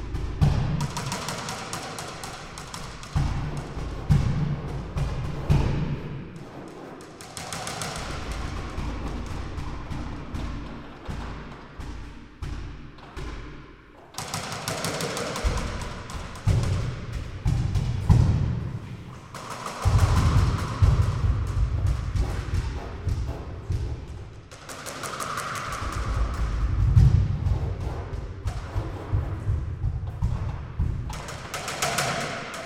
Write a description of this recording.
sound action in the empty cooler room at the former seafood market of Calgary